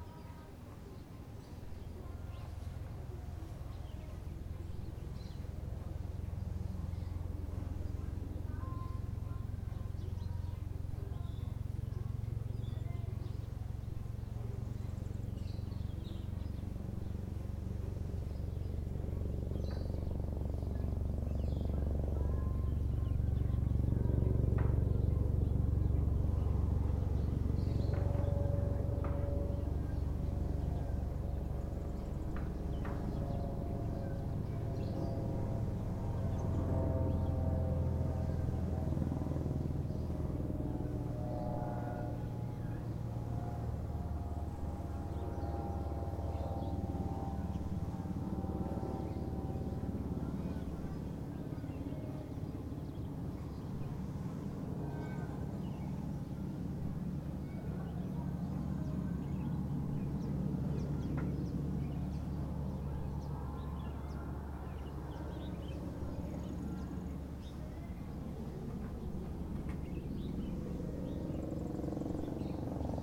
Rue Leconte De Lisle, Réunion - 20180205 0953-1003
20180205_0953-1003 CILAOS concert d'hélicoptère, 6mn30 après le début voici le son de l'hélicoptère "le plus silencieux du monde"!!!
Ces hélicoptères ont du être modifié: ils font bien plus de bruit que devraient faire des EC130B4 normaux, ou bien les pilotes conduisent comme des manches: c'est une énorme nuisance ici bas qui met en danger la flore et la faune.